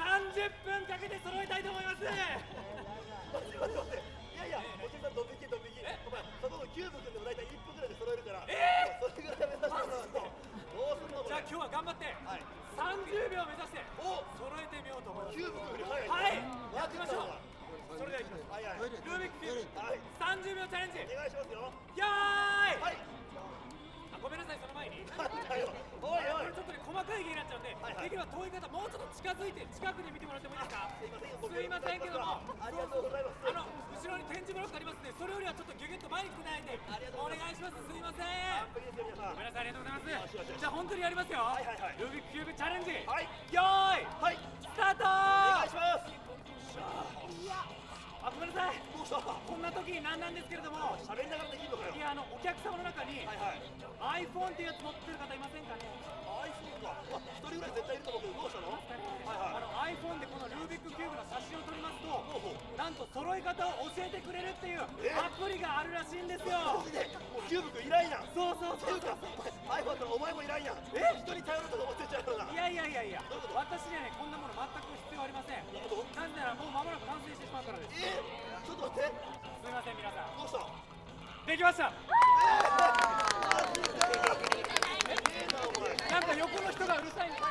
Osaka, National Art Museum forecourt - conjurers
two conjurers performing and entertaining kids in front of National Art Museum and Museum of Technology.
近畿 (Kinki Region), 日本 (Japan), 31 March 2013, 15:54